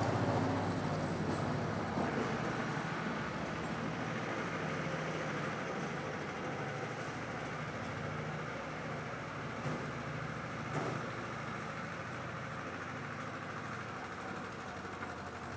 Bolulla - Province d'Alicante - Espagne
Ambiance de nuit + cloche 4h + Ambiance du matin + cloche 7h
Écoute au casque préconisée
ZOOM F3 + AKG C451B